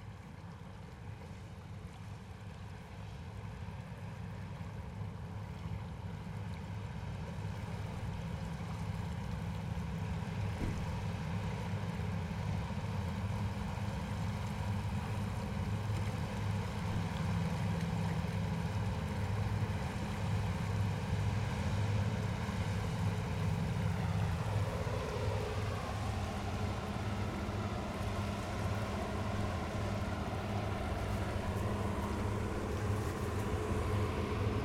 Heurteauville, France - Jumièges ferry
The Jumièges ferry charging cars and crossing the Seine river.